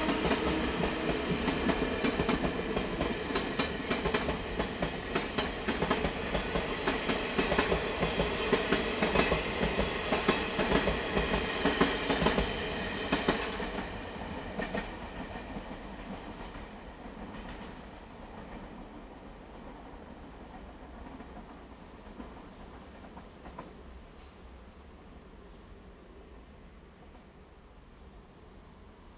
Xiping Rd., Douliu City - Next to the railroad tracks
Next to the railroad tracks, The train passes by
Zoom H2n MS+XY